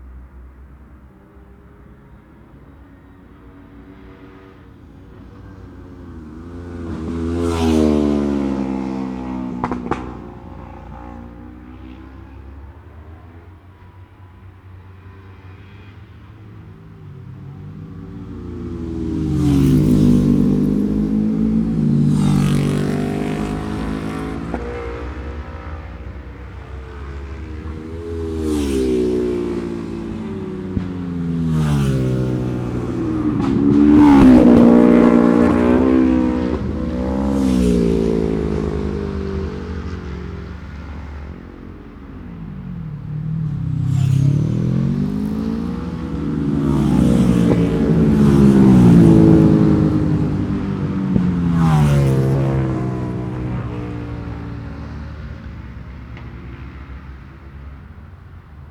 Scarborough UK - Scarborough Road Races 2017 ... lightweights ...
Cock o' the North Road Races ... Oliver's Mount ... Super lightweight practice ...